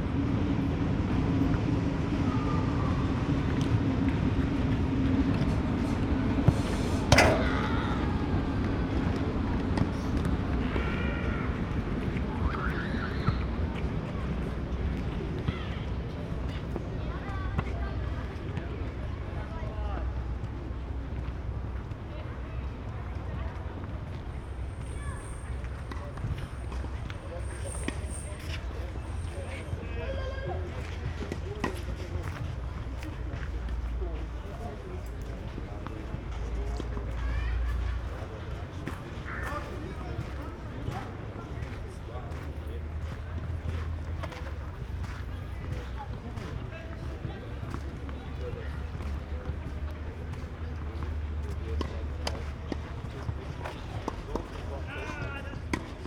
Gleisdreieck, Köln - walk in a rail triangle, ambience
Köln, Gleisdreieck (rail triangle), an interesting and strange enclosed area, surrounded by rail tracks. Walk between the tennis and soccer sports fields
(Sony PCM D50, Primo EM172)